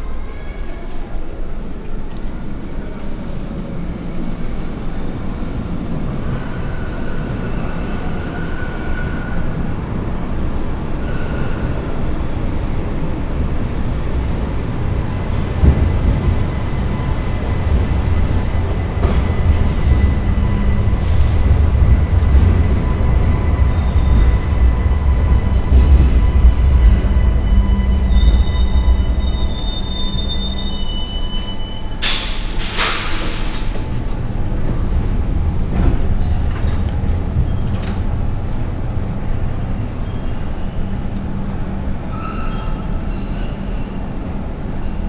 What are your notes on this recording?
At the subway station underneath the main station (invisible from here), Several trains arriving and leaving again in the huge hall with about 8 different tracks - recorded in the evening time. Also sounds of air pressured doors and electronic alarm signals. Projekt - Klangpromenade Essen - topograpgic field recordings and social ambiences